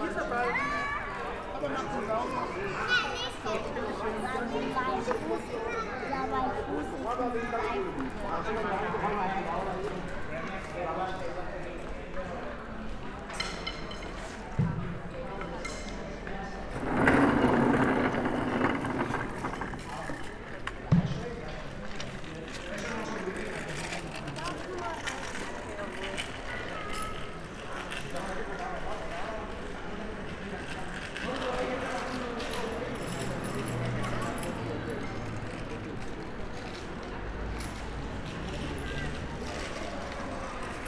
walking and listening to street sounds, Sunday afternoon early Summer, all cars have temporarily been removed from this section of the road, in order to create a big playground for kids of all ages, no traffic, no traffic noise, for an afternoon, this street has become a sonic utopia.
(Sony PCM D50, Primo EM172)
6 June 2021, ~16:00